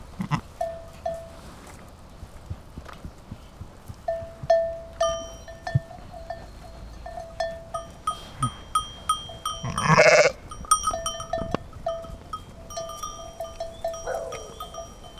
Monteils, Sheep and Goats
The noise is not due to the manipulation noise but the animals walking near the microphone.